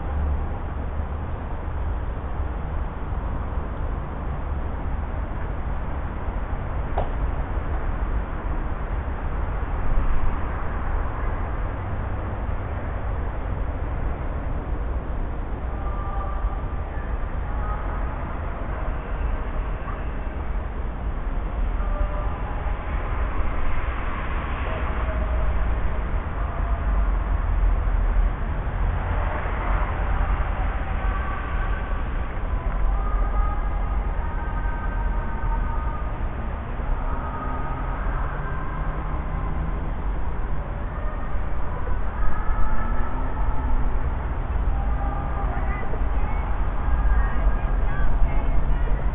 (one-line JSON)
{"title": "Krasinskiego, Warsaw", "date": "2011-10-31 22:39:00", "description": "Distant sound of Stanislaw Kostkas church night service", "latitude": "52.27", "longitude": "20.98", "altitude": "95", "timezone": "Europe/Warsaw"}